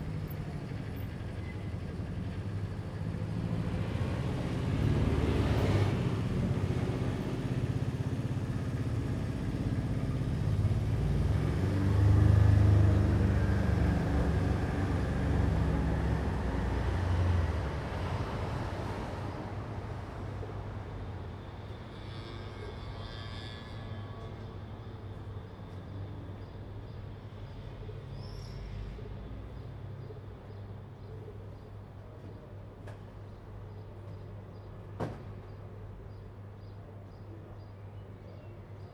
Impasse Guidotti, Nice, France - morning traffic & birds
The morning traffic and birds. The speech and thuds you can hear come from men setting up the vegetable stall across the road.
11 May, 08:07